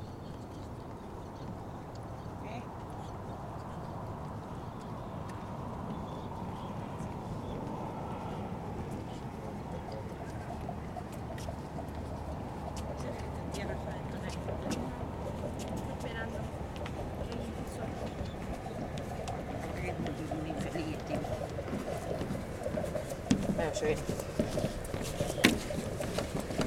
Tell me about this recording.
The area next to the bridge to Fripp Island, as heard from a fishing pier. The pier is part of hunting Island state park. The ambience is quiet, yet distinct. A series of bumps are heard to the right as cars and trucks pass over the bridge. Birds and other small wildlife can be heard. There were other visitors around, and some people pass very close to the recorder. [Tascam Dr-100miii & Primo EM-272 omni mics]